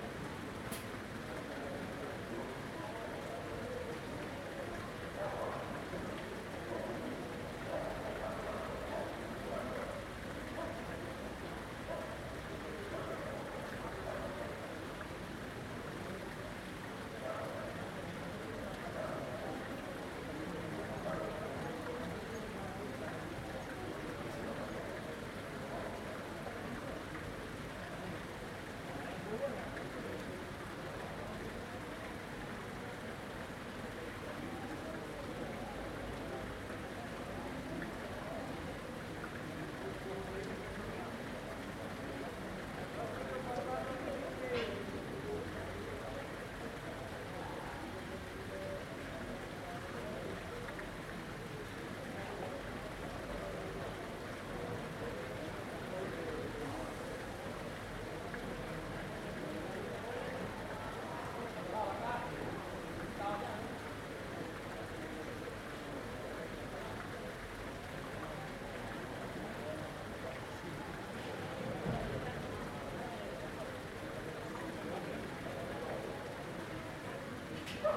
Ronda, Prowincja Malaga, Hiszpania - Setting up
Cafe setting up it's tables, fountain, German tourists and stray dogs. Recorded with Zoom H2n.